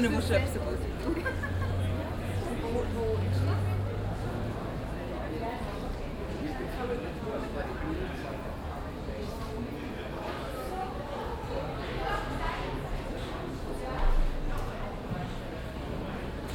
basel, dreispitz, shift festival, steg vor ausstellungshalle
soundmap international
social ambiences/ listen to the people - in & outdoor nearfield recordings